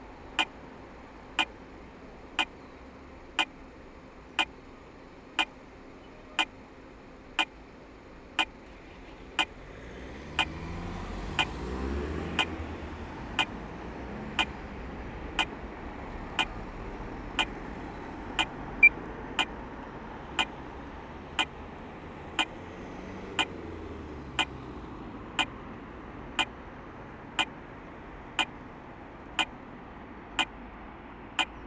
Mechelen, Belgique - Red light
On a quite busy street, red light indicates to pedestrians they can cross. The sound is adaptative to the traffic noise. If there's few cars, the red light produces few sound, and conversely.
Mechelen, Belgium, 21 October 2018